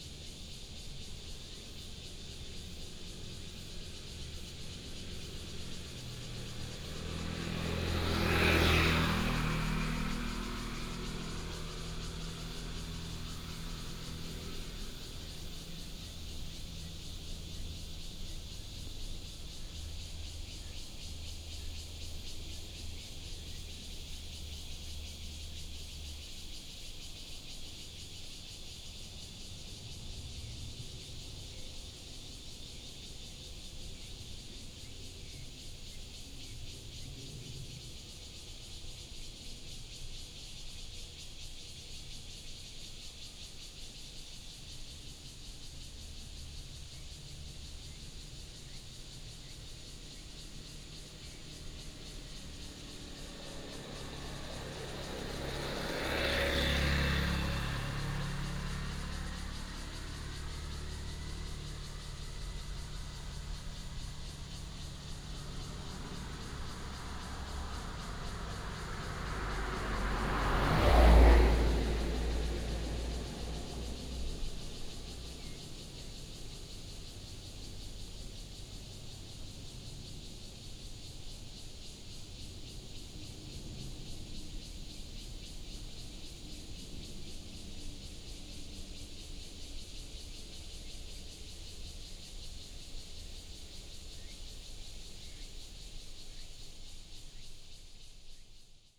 竹21鄉道, Guanxi Township - Cicadas and Birds
Cicadas and Birds sound, Traffic sound, Binaural recordings, Sony PCM D100+ Soundman OKM II
Guanxi Township, 竹21鄉道40-2號